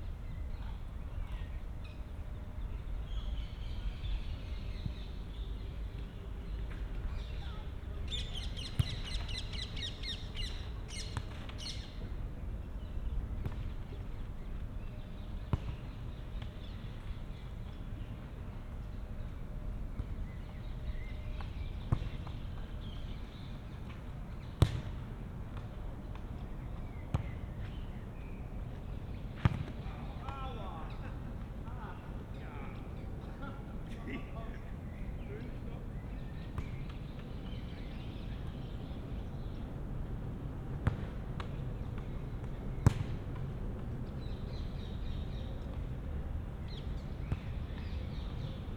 {
  "title": "Rheinpromenade, Mannheim, Deutschland - Sportplatz Schnickenloch",
  "date": "2022-05-30 19:07:00",
  "description": "Sportplatz, Aufwärmen beim Fußball, Jogger dreht Runden, zwei junge Menschen üben Cricket, Vögel, Abendsonne, junge Familie läuft hinter mir vorbei, Wind, Urban",
  "latitude": "49.48",
  "longitude": "8.46",
  "altitude": "97",
  "timezone": "Europe/Berlin"
}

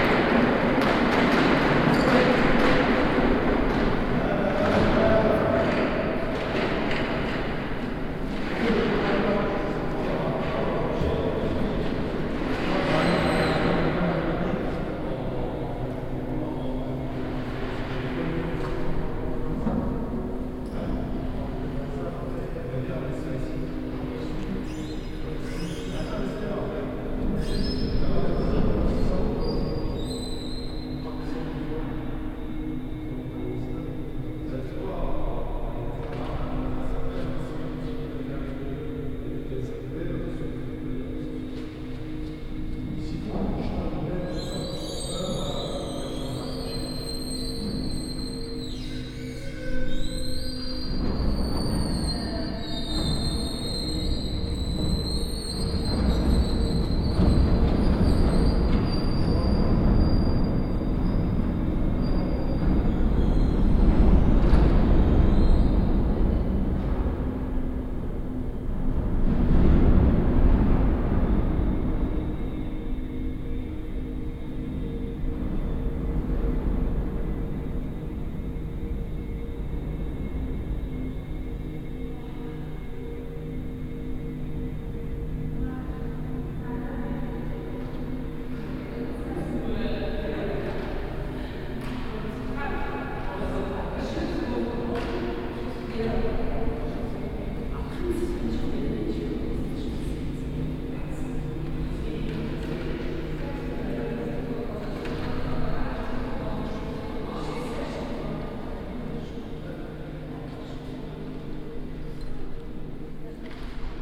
{
  "title": "luxembourg, abbey neumünster, patio with glass roof",
  "date": "2011-11-16 15:59:00",
  "description": "Inside the patio of the abbeye neumünster which is covered by a huge glass roof. The sound of people and transportation waggons passing the patio, the burst of a snooze and the sound of a vowel sound installation by musikaktionen. Recorded during the science festival 2011.\ninternational city scapes - topographic field recordings and social ambiences",
  "latitude": "49.61",
  "longitude": "6.14",
  "altitude": "258",
  "timezone": "Europe/Luxembourg"
}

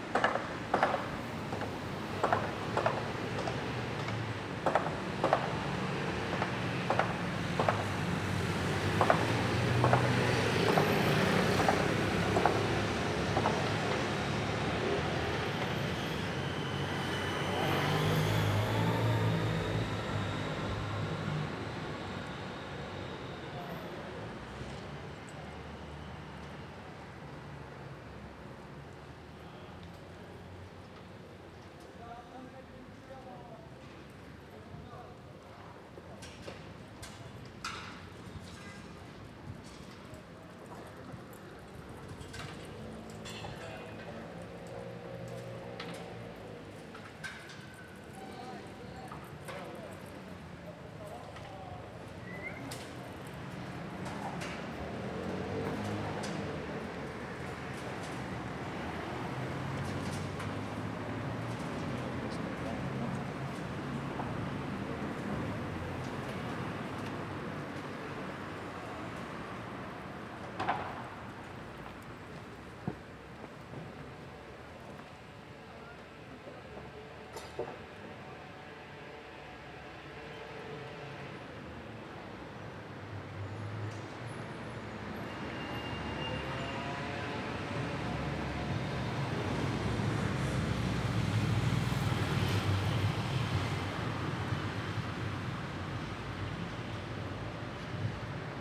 {
  "title": "Vauban, Marseille, Frankreich - Marseille, Boulevard Vauban on the corner of Rue de la Guadeloupe - Street setting, building site, church bell",
  "date": "2014-08-12 14:55:00",
  "description": "Marseille, Boulevard Vauban on the corner of Rue de la Guadeloupe - Street setting, building site, church bell.\n[Hi-MD-recorder Sony MZ-NH900, Beyerdynamic MCE 82]",
  "latitude": "43.28",
  "longitude": "5.37",
  "altitude": "89",
  "timezone": "Europe/Paris"
}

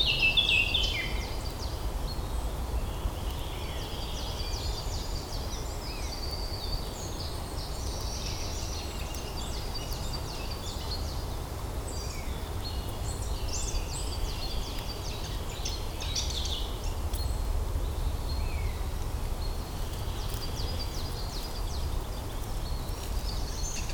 {
  "title": "Court-St.-Étienne, Belgique - In the forest",
  "date": "2018-04-16 12:50:00",
  "description": "Spring time ambiance in a timberland, distant sound of forest birds as Common Chaffinch and Common Chiffchaff.",
  "latitude": "50.61",
  "longitude": "4.55",
  "altitude": "130",
  "timezone": "Europe/Brussels"
}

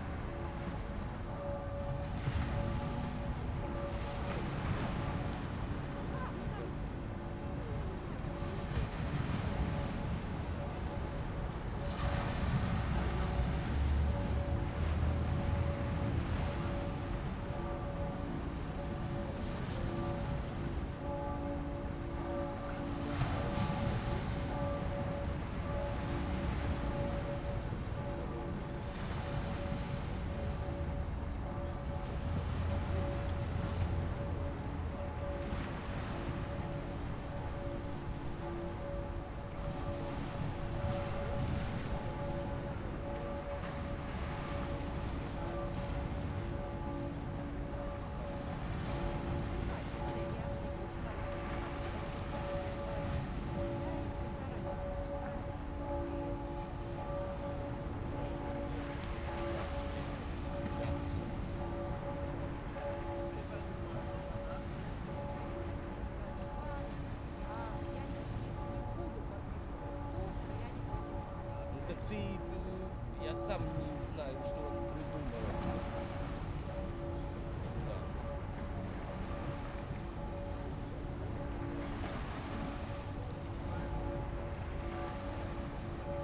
{
  "title": "Linkeroever, Antwerpen, België - Linkeroever",
  "date": "2019-02-24 11:52:00",
  "description": "[H4n Pro] Cathedral bells on the opposite river bank. Towards the end waves crashing on the shore, caused by the river bus.",
  "latitude": "51.22",
  "longitude": "4.39",
  "altitude": "6",
  "timezone": "Europe/Brussels"
}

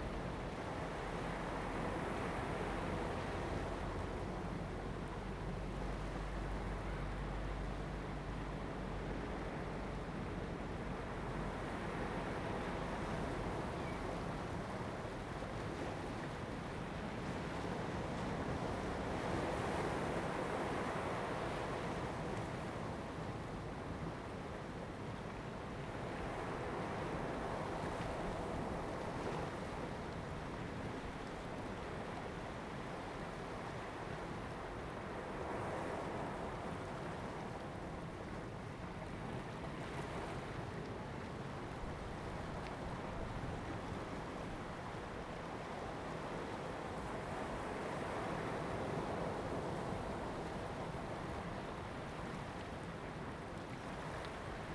{
  "title": "漁人漁港. Jizatay - Small pier",
  "date": "2014-10-29 17:48:00",
  "description": "Small pier, Sound of the waves\nZoom H2n MS +XY",
  "latitude": "22.03",
  "longitude": "121.54",
  "altitude": "6",
  "timezone": "Asia/Taipei"
}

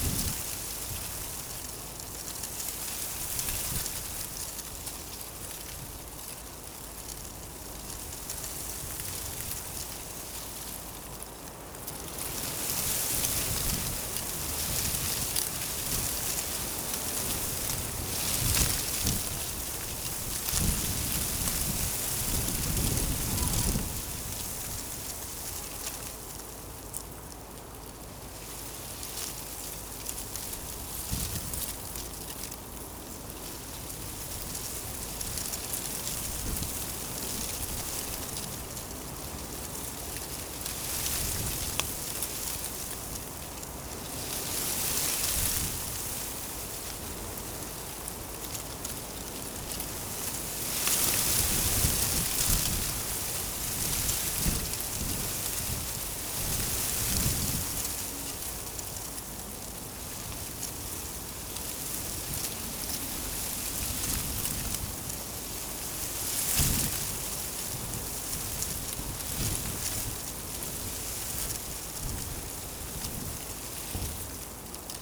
{
  "title": "Quartier des Bruyères, Ottignies-Louvain-la-Neuve, Belgique - Wind",
  "date": "2016-03-14 16:25:00",
  "description": "This is a sunny but windy day. Wind in the arbours, in this quiet district called Bruyères.",
  "latitude": "50.66",
  "longitude": "4.61",
  "altitude": "115",
  "timezone": "Europe/Brussels"
}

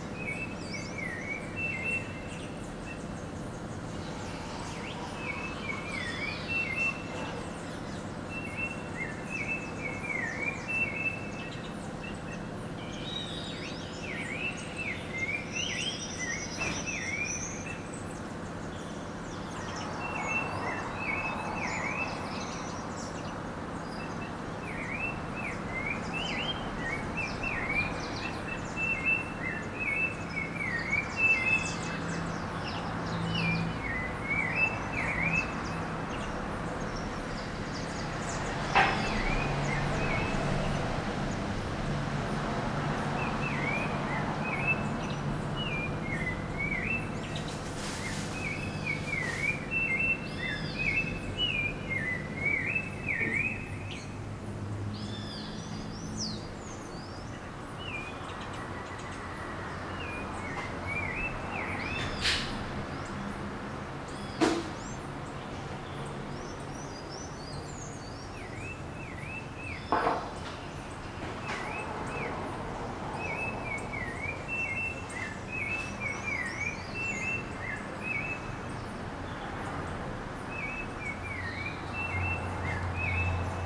{
  "title": "São Domingos, Niterói - Rio de Janeiro, Brazil - Birds in the area. Pássaros na área.",
  "date": "2012-09-23 04:53:00",
  "description": "Domingo. Acordo de manhã cedo e os pássaros cantam na área. Gravo.\nSunday morning and the birds are singing in the area. I record.",
  "latitude": "-22.90",
  "longitude": "-43.13",
  "altitude": "13",
  "timezone": "America/Sao_Paulo"
}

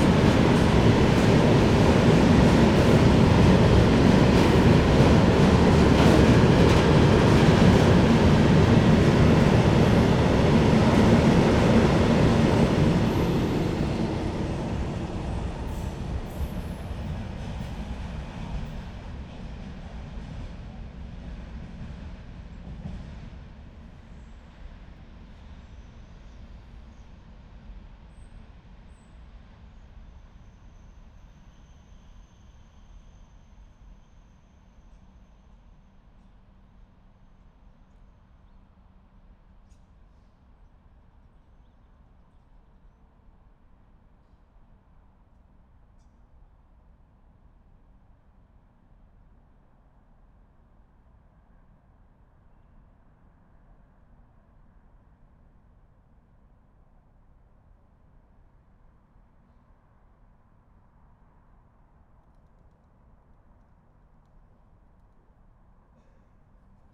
{
  "title": "Trg 15. aprila, Divača, Slovenia - Passenger and cargo trains",
  "date": "2020-10-09 09:50:00",
  "description": "Passenger and cargo trains on railway Divača, Slovenia. Recorded with Lom Uši Pro, MixPre II.",
  "latitude": "45.68",
  "longitude": "13.96",
  "altitude": "434",
  "timezone": "Europe/Ljubljana"
}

